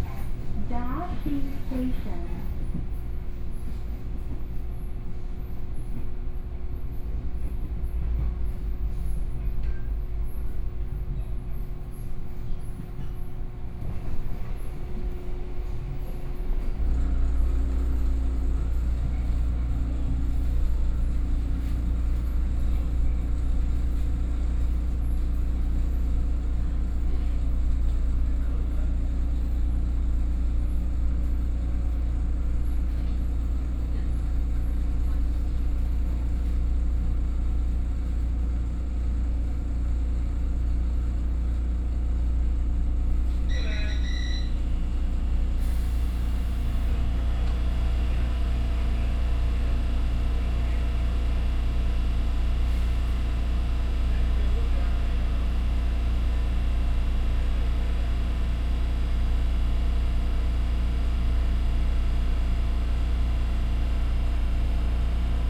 Toucheng Township, Yilan County - Local Train
from Guishan Station to Daxi Station, Binaural recordings, Zoom H4n+ Soundman OKM II
Yilan County, Taiwan, 7 November 2013, 15:13